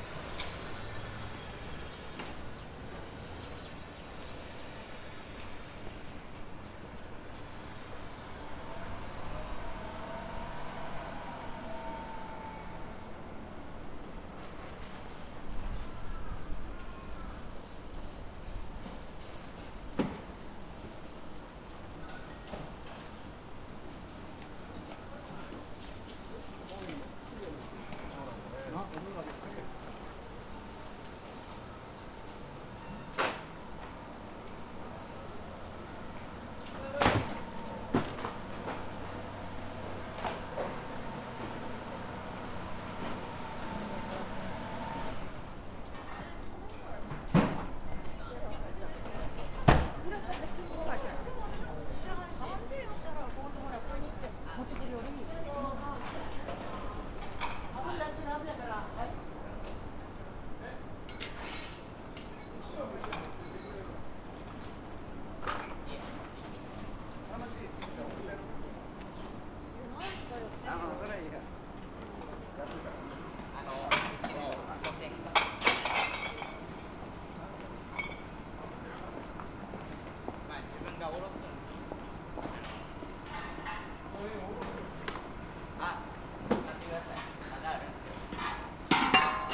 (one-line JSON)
{"title": "Osaka, Kita district - construction site area", "date": "2013-03-30 16:13:00", "description": "although the site was active the area was rather quiet. not too much noise at all. it's an area with many small restaurants, people come here after work to relax in bars. seems nobody is bothered by the working machines.", "latitude": "34.70", "longitude": "135.50", "altitude": "19", "timezone": "Asia/Tokyo"}